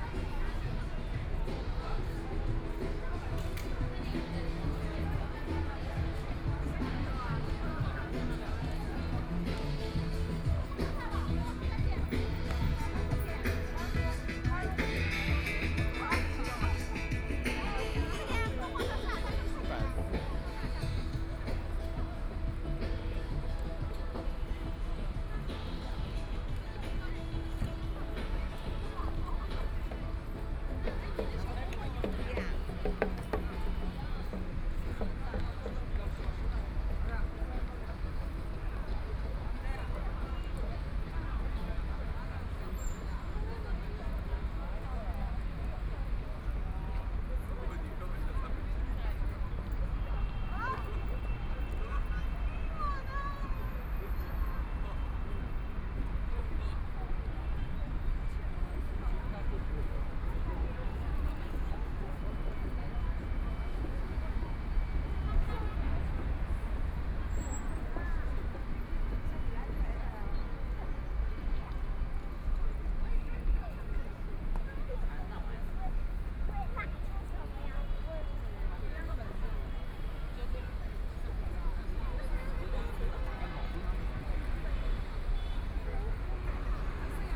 Regional department store shopping mall, Traffic Sound, Street, with moving pedestrians, Binaural recording, Zoom H6+ Soundman OKM II